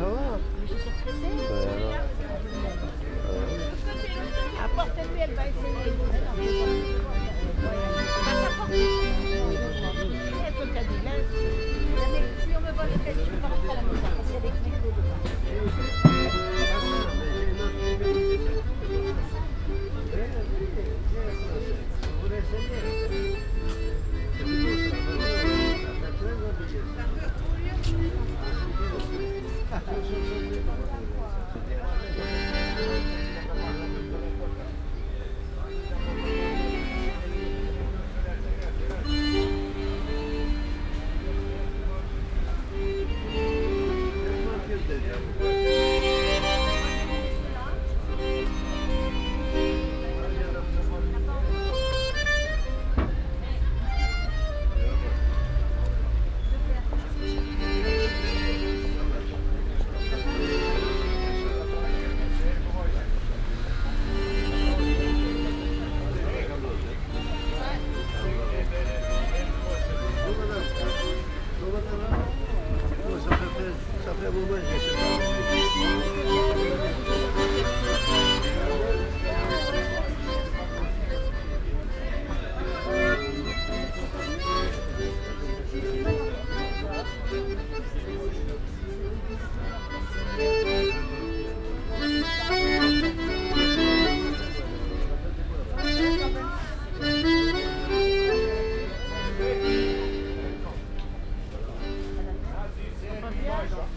Paris, Boulevard Richard Lenoir, Market ambience with accordion player
Market ambience with accordion player